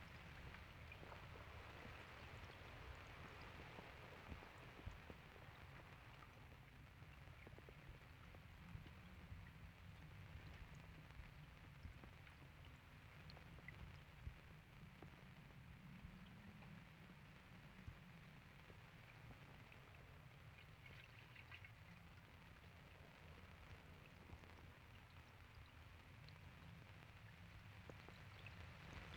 Noord West Buitensingel, Den Haag - hydrophone rec of a rain shower
Mic/Recorder: Aquarian H2A / Fostex FR-2LE
The Hague, The Netherlands, 17 May 2009